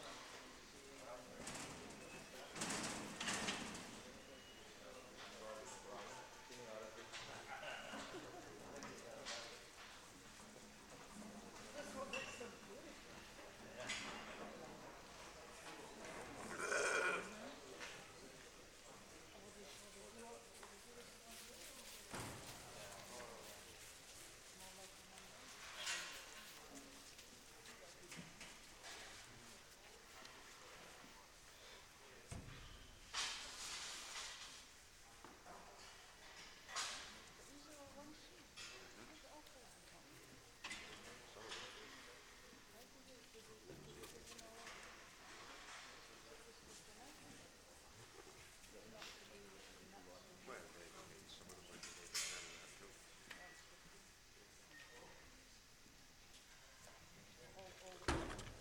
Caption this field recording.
Before being auctioned off, the rams are all inspected for The Shetland Flock Book. This involves bringing all the rams into the show ring and assessing their breed characteristics, seeing how they stand, their confirmation, fleece quality, teeth etc. The rams are managed by a few crofters who herd them into the ring, and they sometimes butt the metal walls of that ring with their amazing horns.